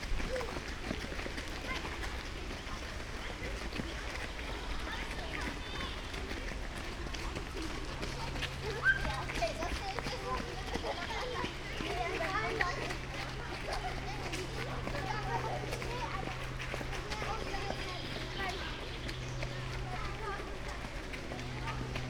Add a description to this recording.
Eversten Holz, Oldenburg, annual kids marathon, kids running-by, (Sony PCM D50, Primo EM172)